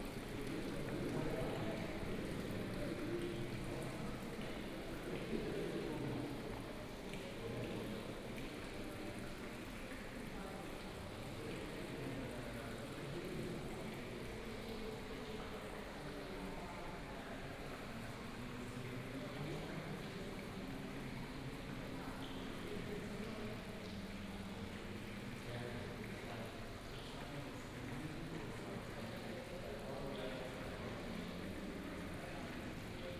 walking In the gallery, Fountain
Munich, Germany, 11 May 2014, ~12:00